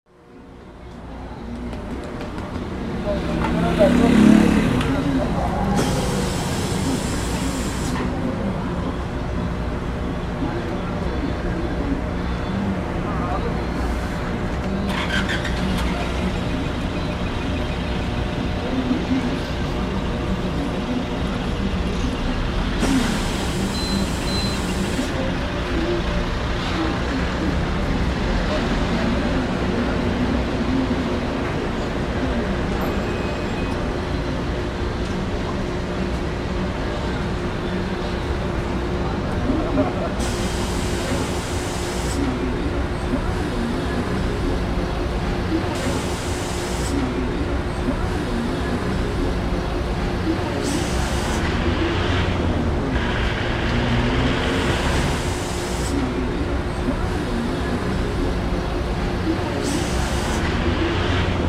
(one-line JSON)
{"title": "Cl., Bogotá, Colombia - Laundry, Gilmar Neighborhood", "date": "2021-05-24 03:00:00", "description": "moderately noisy soundscape. This neighborhood of the city is busy and there is a great variety of stores, the sound of the steam machine of the laundry is very outstanding, there is the presence of some cars, and children's voices.", "latitude": "4.74", "longitude": "-74.06", "altitude": "2560", "timezone": "America/Bogota"}